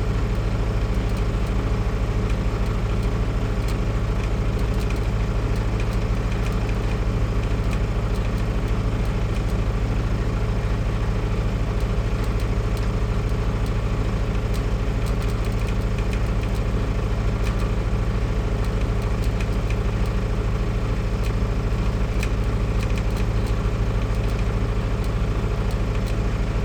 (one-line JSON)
{"title": "berlin: liberdastraße - the city, the country & me: generator", "date": "2010-08-20 01:37:00", "description": "generator at a construction site of a combined sewer\nvarious distances between recorder and generator\nthe city, the country & me: august 20, 2010", "latitude": "52.49", "longitude": "13.43", "altitude": "43", "timezone": "Europe/Berlin"}